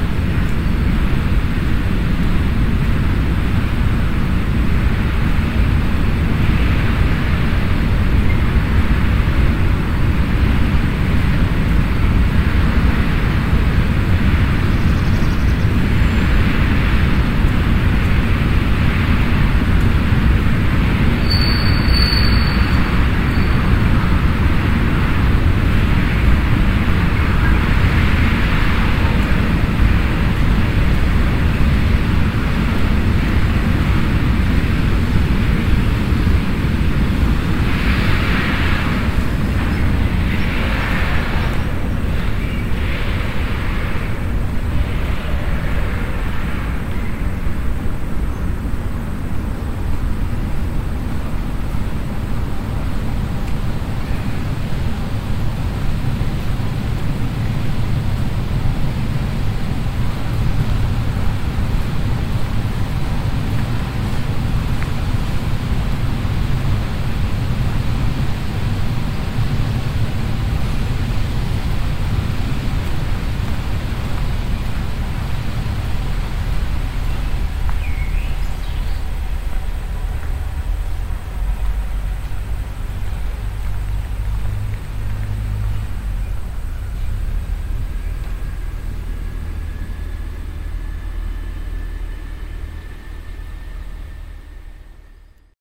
stereofeldaufnahmen im september 07 mittags
project: klang raum garten/ sound in public spaces - in & outdoor nearfield recordings
stadtgarten park, hinterer kiesweg